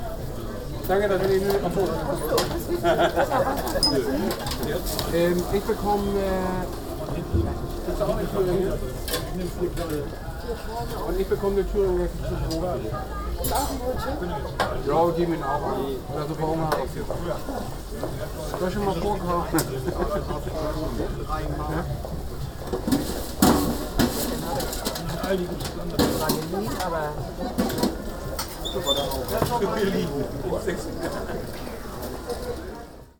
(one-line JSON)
{"title": "Imbisswagen, Markt Große Bergstraße. - Große Bergstraße/Möbelhaus Moorfleet", "date": "2009-10-31 13:00:00", "description": "Imbisswagen/Markt Große Bergstraße", "latitude": "53.55", "longitude": "9.94", "altitude": "28", "timezone": "Europe/Berlin"}